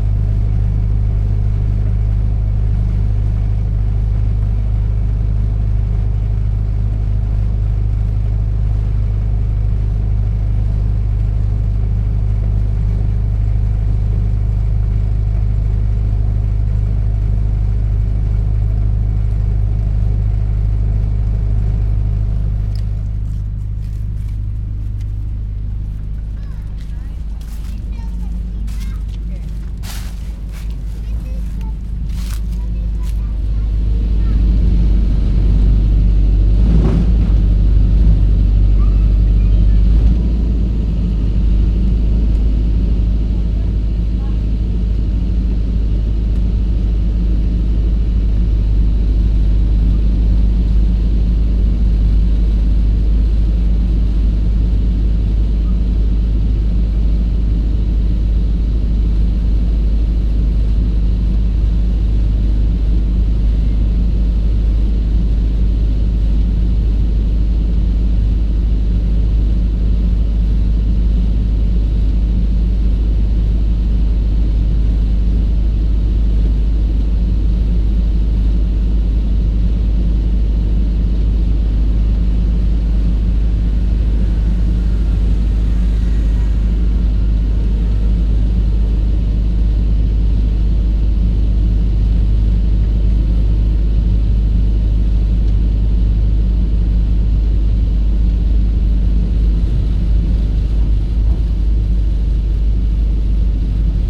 Maria-Theresialei, Antwerpen, Belgium - Walk to street works generator, Antwerp
This is a short walk to capture the low drones of a construction works generator that remains on all night, while the building is ongoing during the day. The audio file has a wide range of dynamics, with quieter walks at the start and finish, and the louder drones starting around the 1:30 mark.
Equipment: Sony PCM - D100 and a little bit of processing.
Vlaanderen, België / Belgique / Belgien